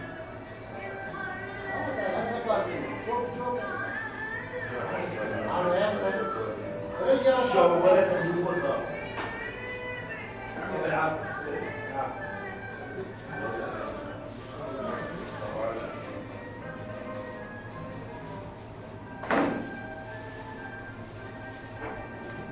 :jaramanah: :another cafe another song: - thirty